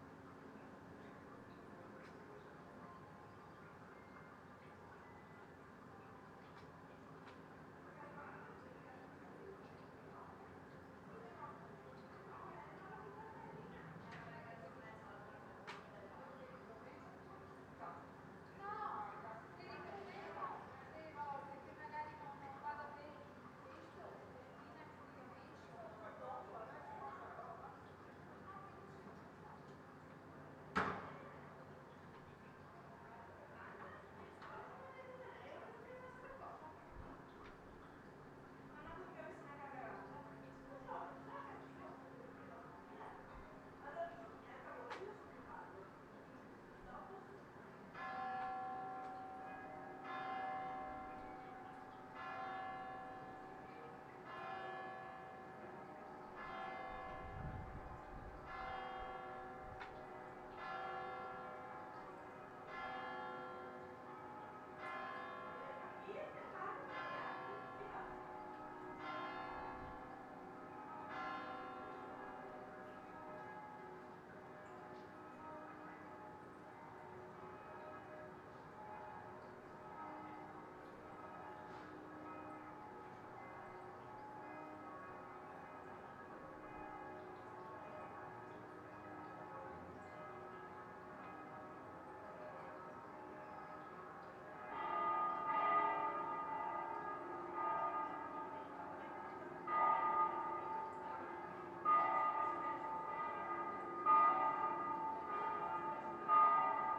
Cannaregio, Venice, Włochy - From window House del Pozzo hostel
Soundscape from hostel window. Lazy sunday, ringing bells, talking, succussion of water from channel and other sound.
Venezia, Italy, 11 December 2016, ~12:00